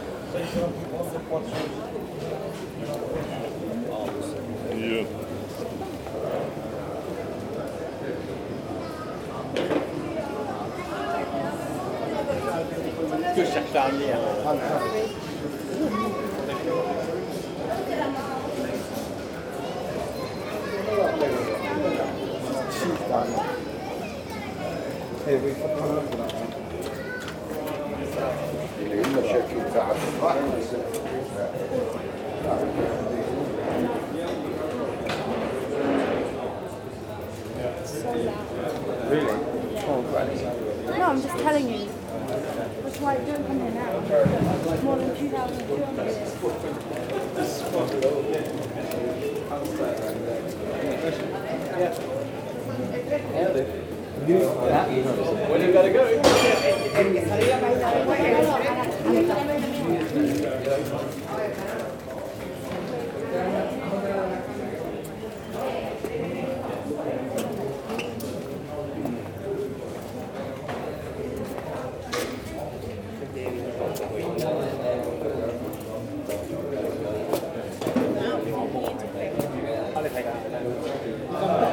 {"title": "Brussel, Belgium - The restaurants street", "date": "2018-08-25 13:30:00", "description": "Walking into the narrow 'rue des Bouchers', where every house is a restaurant, and where every restaurant is a tourist trap ! At the end of the walk, after the Delirium bar, the Jeanneke Pis, a small baby pissing, but this time it's a girl ! Sound of tourists, clients in the bar and a small dog.", "latitude": "50.85", "longitude": "4.35", "altitude": "23", "timezone": "GMT+1"}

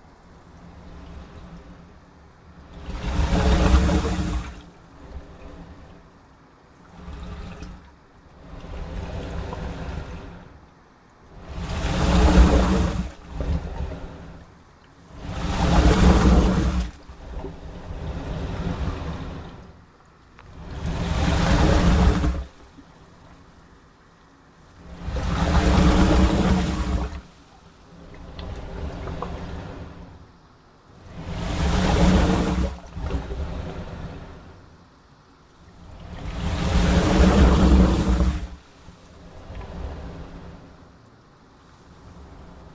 {"title": "A wharf on Ulleung-do - A Wharf on Ulleung-do", "date": "2012-05-02 09:00:00", "description": "wave action under a crude concrete wharf on a remote island in the East Sea", "latitude": "37.54", "longitude": "130.89", "altitude": "2", "timezone": "Asia/Tokyo"}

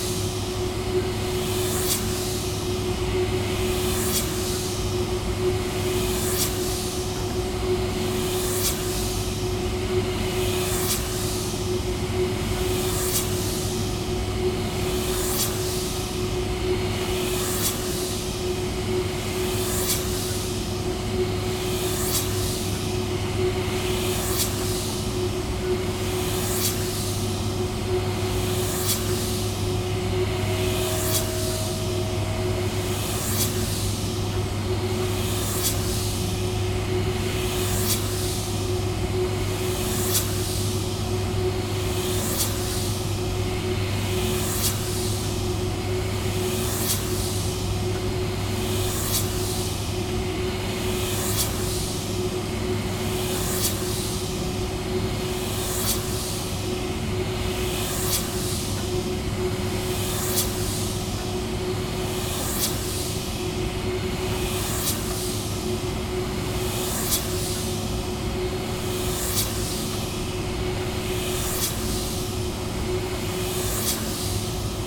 {"title": "Hautevesnes, France - Wind turbine", "date": "2018-07-22 09:48:00", "description": "The wind turbines are often near the motorways. It's not easy to record. Here in the Aisne area fields, it was a very good place to listen to the wind, as it's noiseless. So, here is a wind turbine during a quiet sunday morning.", "latitude": "49.12", "longitude": "3.25", "altitude": "164", "timezone": "Europe/Paris"}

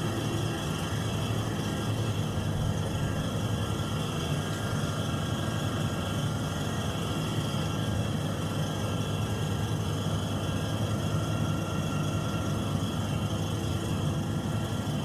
{"title": "Ku Sloncu, Szczecin, Poland", "date": "2010-10-31 16:04:00", "description": "At the grocery store.", "latitude": "53.42", "longitude": "14.52", "timezone": "Europe/Warsaw"}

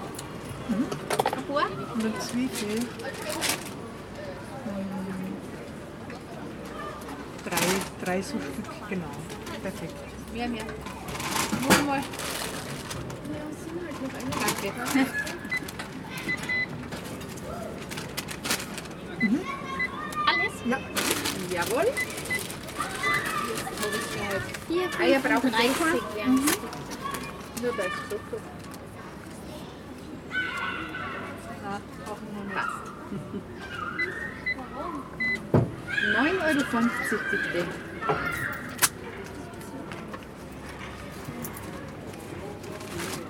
{"title": "Erzabt-Klotz-Straße, Salzburg, Österreich - Biomarkt Unipark", "date": "2021-07-09 09:51:00", "description": "Jeden Freitag BIO Markt am Unipark Nonntal (Vorübergehend zum Standort Kajetanerplatz, der renoviert wird )\nEvery Friday BIO Market at Unipark Nonntal (Temporarily to the Kajetanerplatz location which is renovated).", "latitude": "47.79", "longitude": "13.05", "altitude": "423", "timezone": "Europe/Vienna"}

